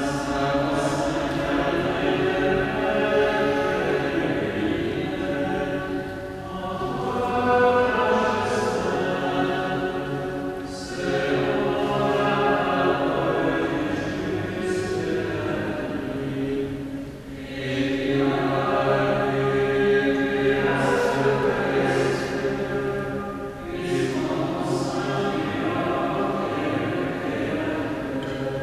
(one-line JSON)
{"date": "2010-09-11 19:30:00", "description": "Chanting in the Église Saint-Gervais-Saint-Protais, Paris. Binaural recording.", "latitude": "48.86", "longitude": "2.35", "altitude": "39", "timezone": "Europe/Paris"}